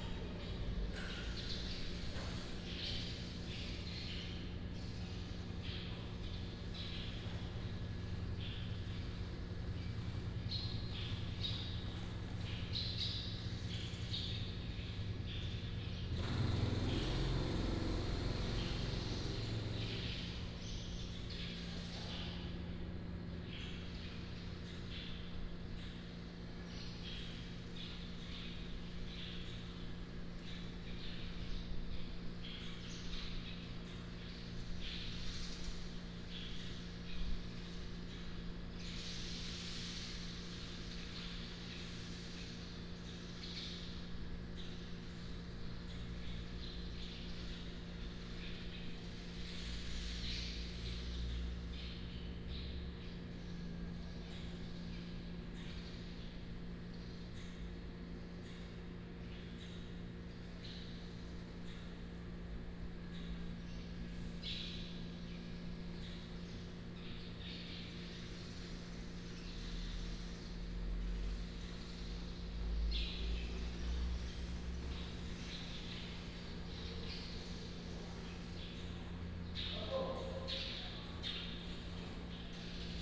In the station hall, birds sound, Footsteps
Taitung County, Taimali Township, 站前路2號, March 2018